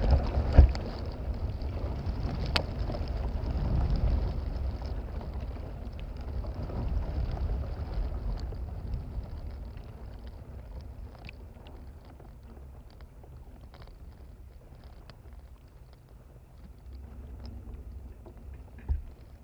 R. dos Malmequeres, Amora, Portugal - Windgusts through palmtree fronds and fibres heard by 3 contactmics
Palm trees trunks are covered with matted dry fibres, the remains of leaf fronds from previous years. It's easy to hide a contact microphone amongst them. They sound when a wind blows. Another contactmic picking up the bassier sound of a bigger branch as it meets the trunk recorded in sync is mixed in.
DIY piezo contact mics: Triton Bigamp piezo preamps